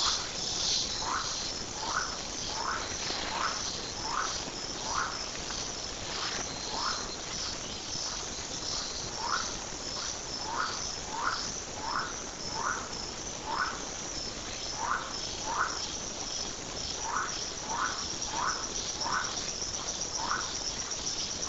Hato Corozal, Casanare, Colombia - 6 pm
the forest at 6 pm.
13 April 2012, 08:23